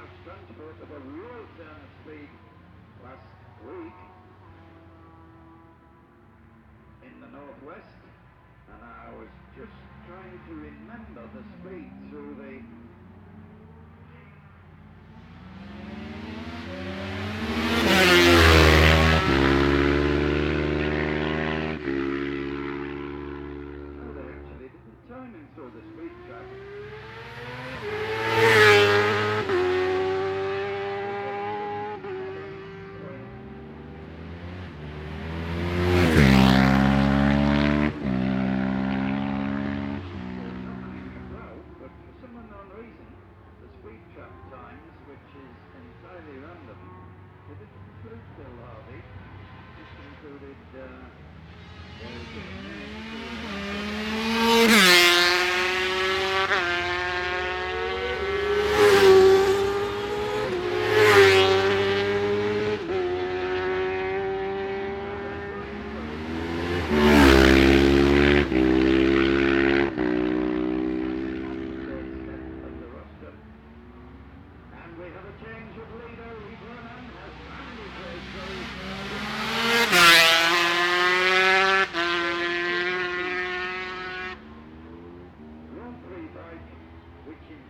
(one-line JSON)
{"title": "Jacksons Ln, Scarborough, UK - Barry Sheene Classic Races 2009 ...", "date": "2009-05-23 14:00:00", "description": "Barry Sheene Classic Races 2009 ... 400 race with 125 ... 250 ... 400 ... one point stereo mic to minidisk ...", "latitude": "54.27", "longitude": "-0.41", "altitude": "144", "timezone": "GMT+1"}